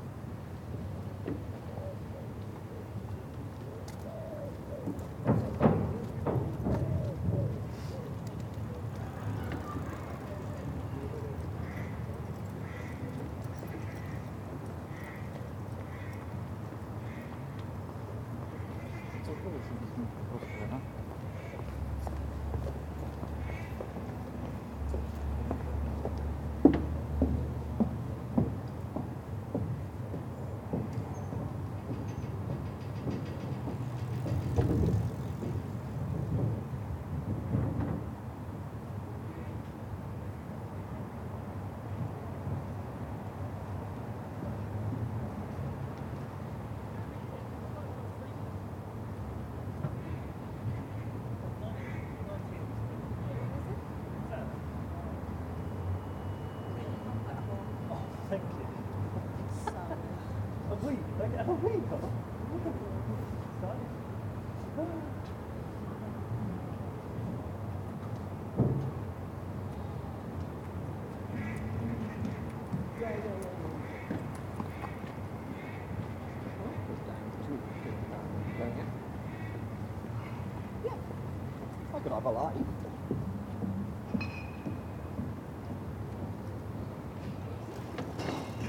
The pedestrian bridge beside the River Kennet, Reading, UK - bikes, walkers and bottle-bank
A few moments after the earlier sounds recorded here, you can hear someone dropping their bottles off at the bottle bank.
4 April 2017, ~18:00